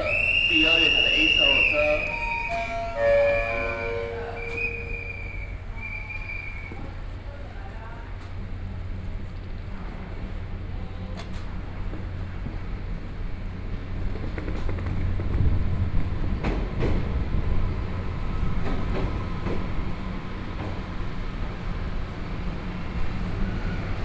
Hualien Station, Taiwan - Walking in the station
From the station hall, Walking through the underground passage, To the station platform, Zoom H4n + Soundman OKM II
Hualien County, Taiwan, 15 January 2014, ~12:00